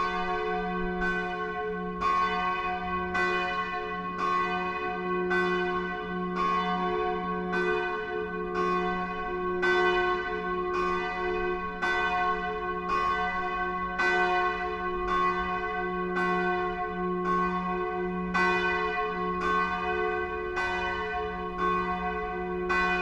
leipzig, nathanaelkirche, 12 uhr
1. september 2011, 12 uhr mittags läutet die nathanaelkirche.